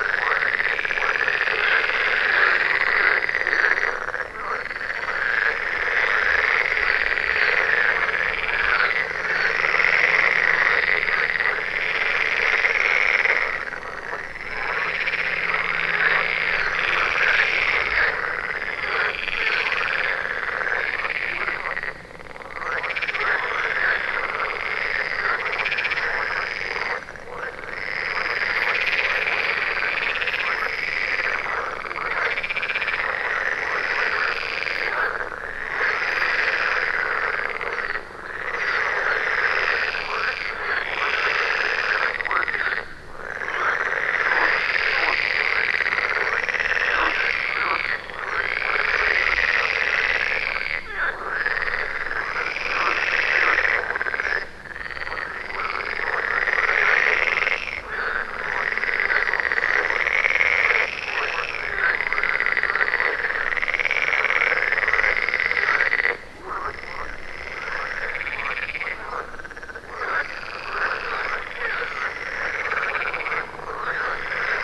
Hundreds of frogs in a pond ribbit loudly at night. Zoom F4 recorder, Røde NTG2, Blimp and DeadWombat windshield.